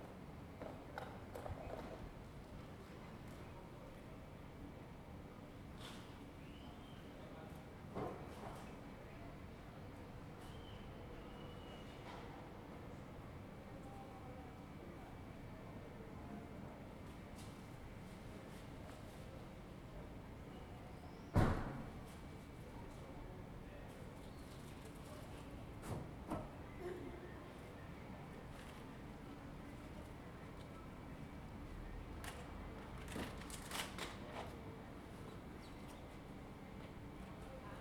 Ascolto il tuo cuore, città, I listen to your heart, city. Several chapters **SCROLL DOWN FOR ALL RECORDINGS** - Three ambiances April 25 in the time of COVID19 Soundscape
"Three ambiances April 25 in the time of COVID19" Soundscape
Chapter LVI of Ascolto il tuo cuore, città. I listen to your heart, city
Saturday April 25th 2020. Fixed position on an internal terrace at San Salvario district Turin, forty six days after emergency disposition due to the epidemic of COVID19.
Three recording realized at 11:00 a.m., 6:00 p.m. and 10:00 p.m. each one of 4’33”, in the frame of the project (R)ears window METS Cuneo Conservatory) (and maybe Les ambiances des espaces publics en temps de Coronavirus et de confinement, CRESSON-Grenoble) research activity.
The thre audio samplings are assembled here in a single audio file in chronological sequence, separated by 7'' of silence. Total duration: 13’53”
25 April 2020, 11:00